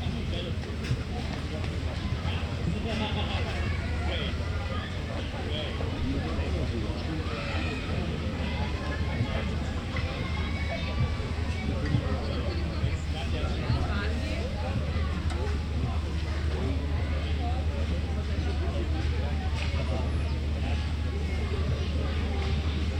Mildenberg, Zehdenick, Germany - chaos communication camp 2015, am see
at the bathing spot, an der badestelle des ziegeleiparks mildenberg; recorded in occasion of the 2015th chaos communication camp, aufgenommen ebenda